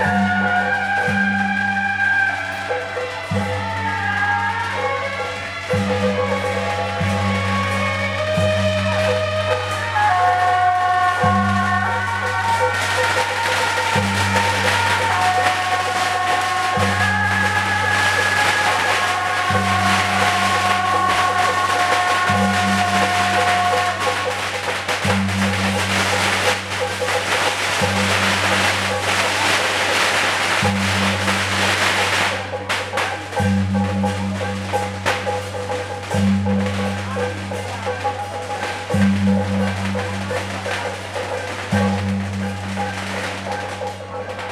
in a small alley, temple festivals, The sound of firecrackers and fireworks
Sony Hi-MD MZ-RH1 + Sony ECM-MS907
Da’an District, Taipei City, Taiwan, February 2012